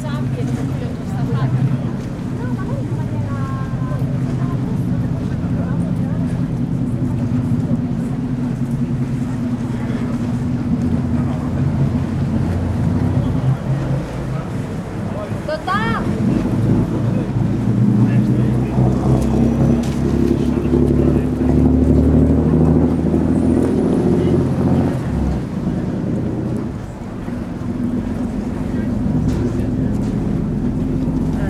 venezia zattere
s.maria del rosario